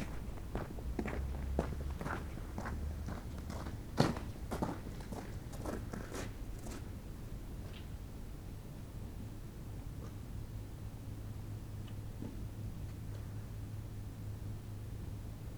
Berlin: Vermessungspunkt Friedelstraße / Maybachufer - Klangvermessung Kreuzkölln ::: 20.03.2013 ::: 03:11